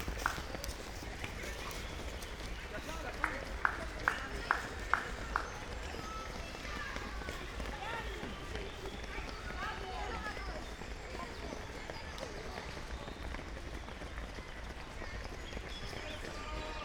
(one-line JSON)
{"title": "Eversten Holz, Oldenburg - kids marathon in the forest", "date": "2015-06-07 10:10:00", "description": "Brunnenlauf marathon, a flock of kids passing-by in the forest\n(Sony PCM D50, Primo EM172)", "latitude": "53.14", "longitude": "8.20", "altitude": "13", "timezone": "Europe/Berlin"}